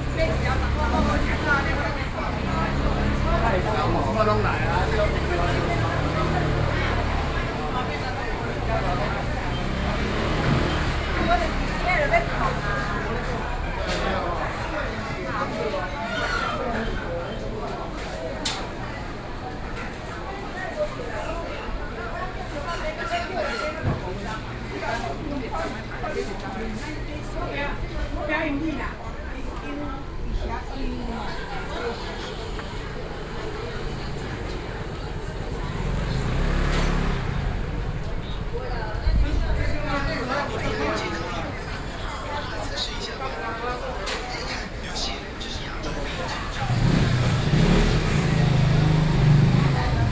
Lane, Section, Sānhé Rd, Sanzhong District - Traditional markets
Sanzhong District, New Taipei City, Taiwan, 6 November 2012